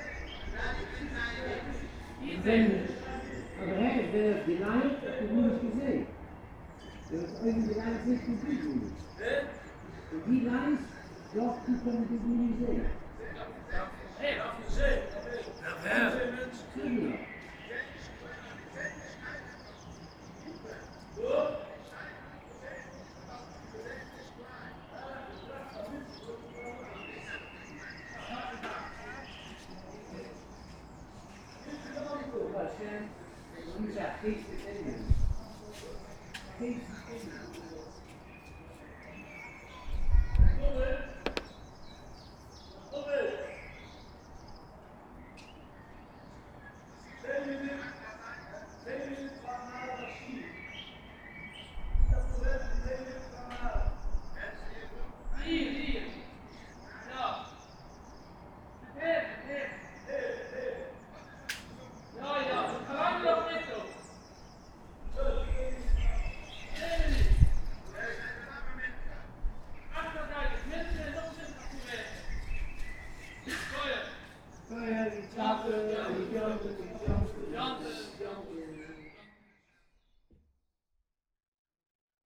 Lamorinierestraat, Antwerp, Belgium - Discussion on Pesach liturgy in Corona-crisis
Orthodox Jews discuss how to proceed with singing the liturgy during the Corona-crisis, with 10 men spread over gardens and balconies.